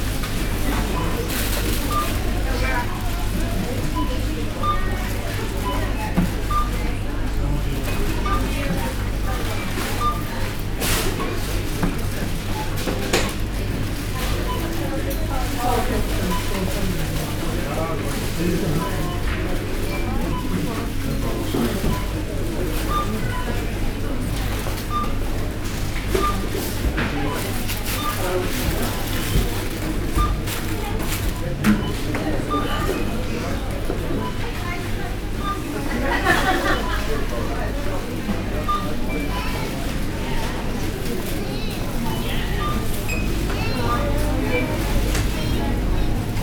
Walk through a busy supermarket.
MixPre 6 II with 2 x MKH 8020s